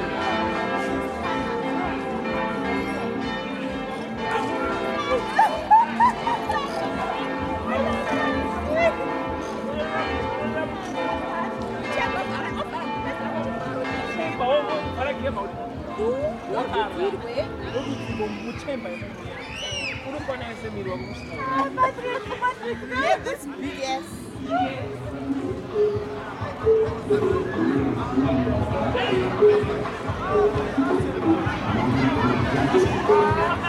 Christuskirche, Hamm, Germany - Yes Afrika Festival bells...
… a further mix of bells and Festival sounds…. … it’s the Yes Afrika Festival 2014…